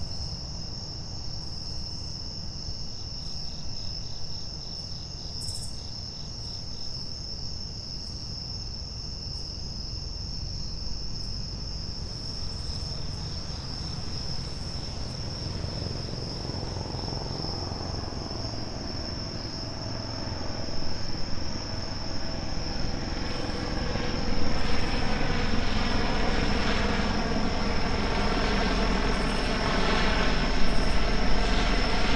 Tudor Arms Ave, Baltimore, MD, USA - Fall Chorus
Chorus of night sounds at Wyman Park with the HVAC of Johns Hopkins University in the distance.
Recorded with a Rode NT4 stereo mic into a Sound Devices MixPre 3 II.